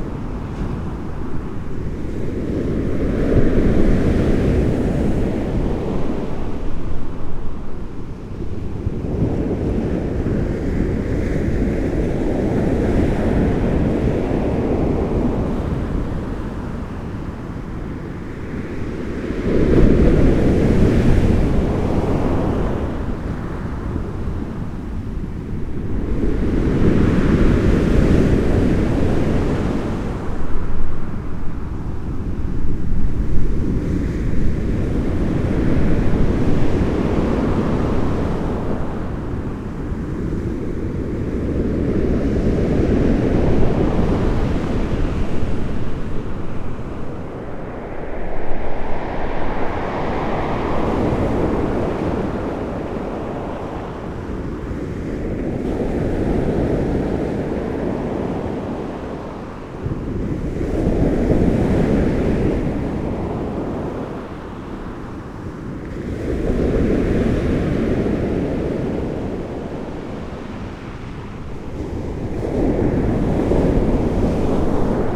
Morning Storm, Aldeburgh, Suffolk, UK - Morning Storm
A gusty morning over a receding tide on the shingle beach. This is another experiment with longer recordings.